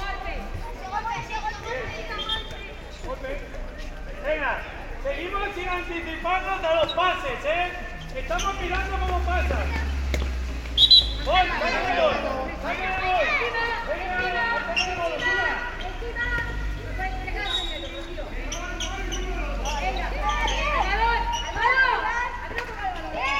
Colonia Juan XXIII, Alicante, Spain - (06 BI) School Playground
Binaural recording of a school playground at Colonia San Juan XXIII.
Recorded with Soundman OKM on Zoom H2n.
Comunitat Valenciana, España, November 2016